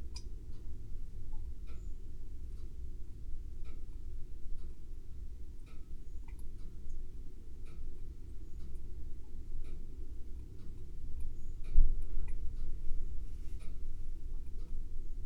water filter in 3 parts - water filter part 2
Part 2 This is a 2 hour 30 min recording in 3 parts.
The water filter is protagonist with squealing tight throat to lush fat, sonority, while the ensemble ebbs and flows in this rich, bizarre improvisation: the grandfather clock measures; the pressure cooker hisses and sighs; the wind gathers pace to gust and rage; vehicles pass with heavy vibration; the Dunnock attempts song from the rambling rose; the thermostat triggers the freezer’s hum; children burst free to the playground; a boy-racer fancies his speed; rain lashes and funnels from the roof; a plastic bag taunts from its peg on the line, as the wind continues to wuther.
Capturing and filtering rain water for drinking is an improvement on the quality of tap water.
East of England, England, United Kingdom